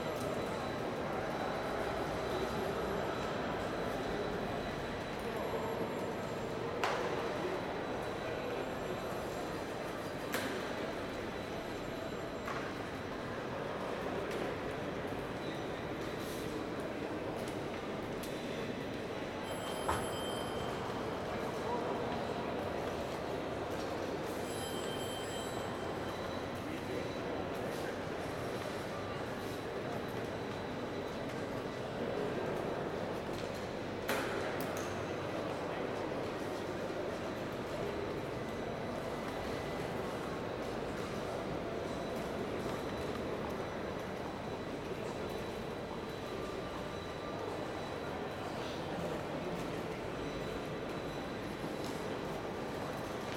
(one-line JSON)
{"title": "C. Cerrito, Montevideo, Departamento de Montevideo, Uruguay - Banco de la Republica Oriental del Uruguay - Montevideo", "date": "2001-10-20 10:00:00", "description": "Siège de la \"Banco de la Republica Oriental del Uruguay\" - Montevideo\nambiance intérieure.", "latitude": "-34.91", "longitude": "-56.21", "altitude": "32", "timezone": "America/Montevideo"}